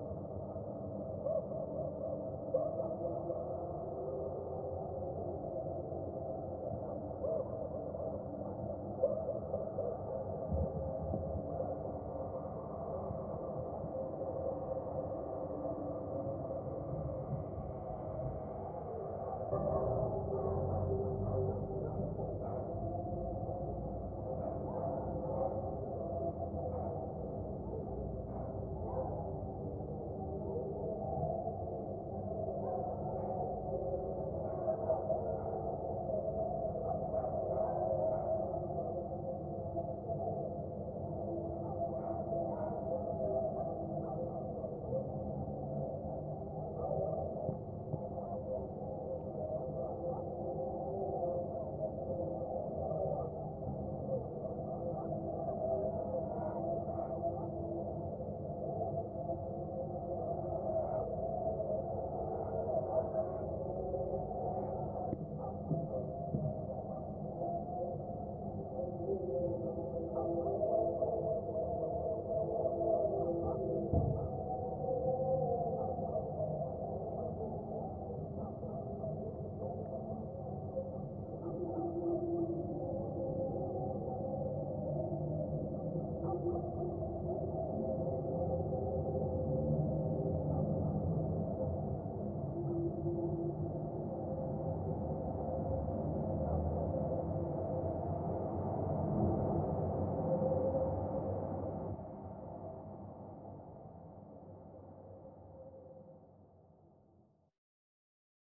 Record by: Alexandros Hadjitimotheou